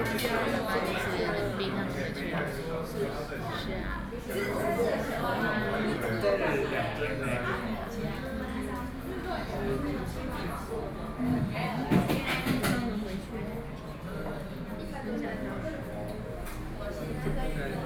Taipei, Taiwan - In the restaurant
In the restaurant, Sony PCM D50 + Soundman OKM II